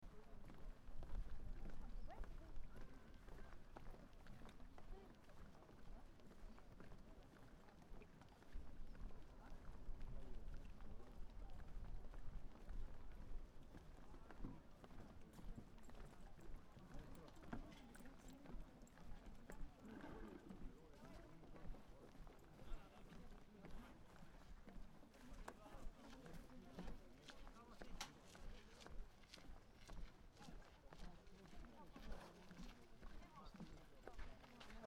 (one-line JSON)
{"title": "Trakai Historical National Park, Trakai, Lithuania - Boats", "date": "2011-08-06 10:09:00", "latitude": "54.65", "longitude": "24.93", "altitude": "145", "timezone": "Europe/Vilnius"}